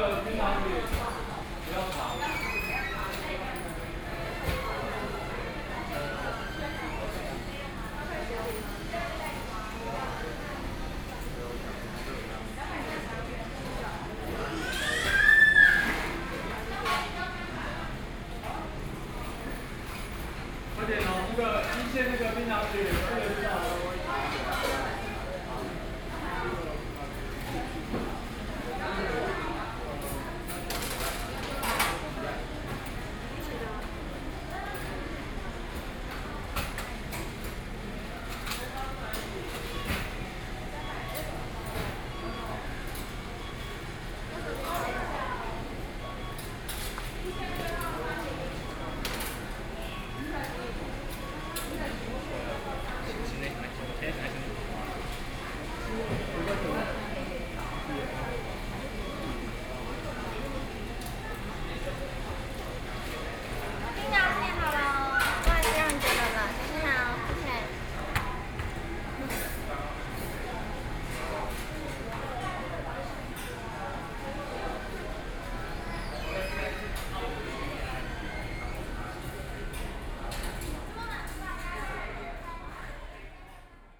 宜蘭市南門里, Yilan City - In the fast food restaurant
McDonald's, Ordering counter
Sony PCM D50+ Soundman OKM II
Yilan City, Yilan County, Taiwan, 5 July 2014